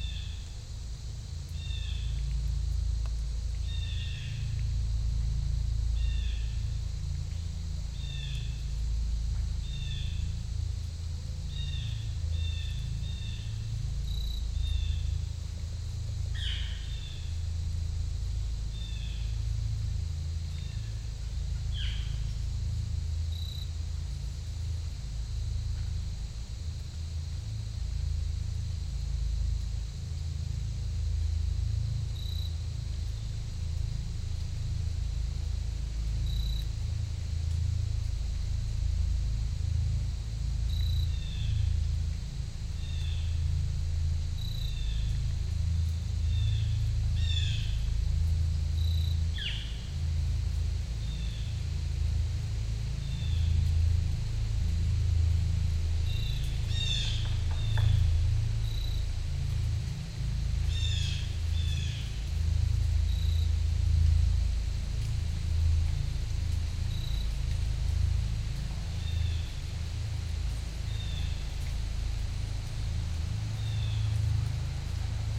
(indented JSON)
{
  "title": "Lower Alloways Creek, NJ, USA - tindall island",
  "date": "2009-05-19 02:00:00",
  "description": "Natural preserve road through forest and, eventually, marshland. Quiet roadside recording builds to an encounter.",
  "latitude": "39.40",
  "longitude": "-75.40",
  "altitude": "7",
  "timezone": "America/New_York"
}